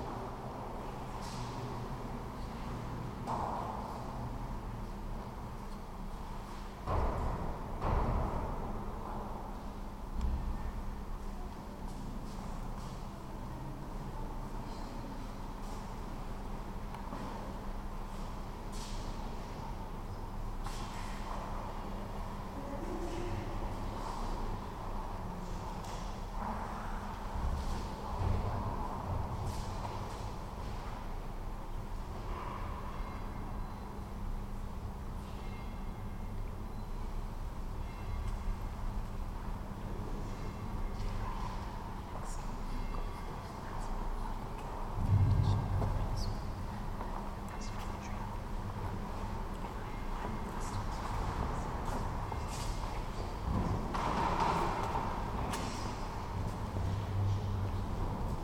The church of Saint Jacob
The church of saint Jakob between Old Town Square and Náměstí Republiky in Malá Štupartská street, behind Ungelt. At the entrance is hanging human hand, referring to the old legend about the thief, who wanted to steal a statue of Maria. Holy Mother grasped his hand and didnt relese him untill the guards came in the moring.Another story connected to the church is about certain Jan z Mitrovic who was burried alive and the thirds goes about the famous hero Jan Tleskač from the book for boys by Jaroslav Folglar Stínadla se bouří.